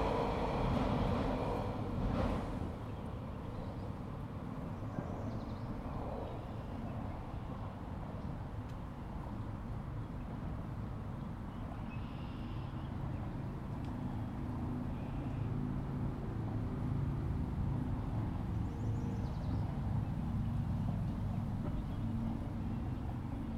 Humber River Park - Between Old Mill Subway station and Bloor street
Recorded right next to the river between the railway and the road.
Recorded on a Zoom H2N
Golden Horseshoe, Ontario, Canada, 7 June 2020